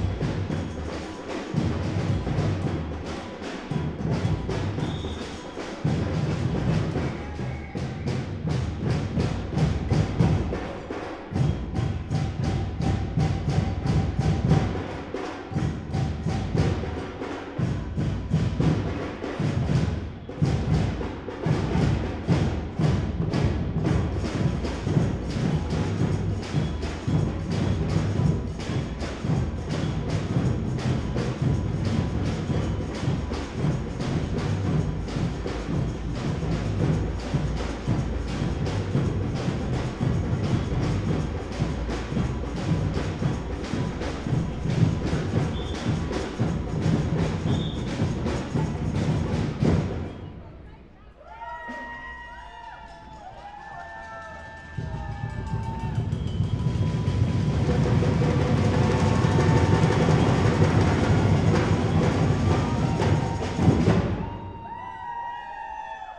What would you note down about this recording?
Köln Hauptbahnhof, main station, a group of drummers celebrating a wedding or smtg. (Sony PCM D50, Primo EM172)